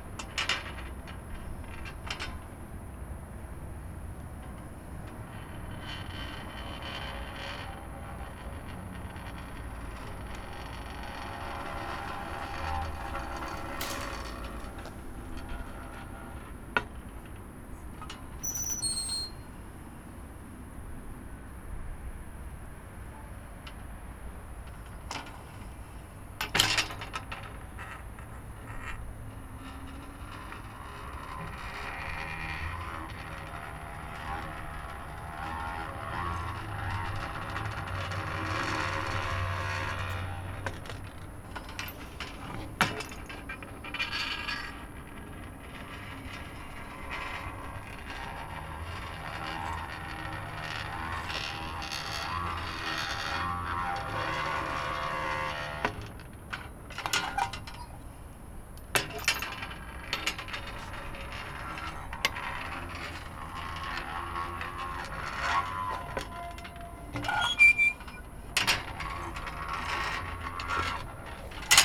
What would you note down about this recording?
operating the handle of a rusty, manual water pump. i wasn't able to get any water running.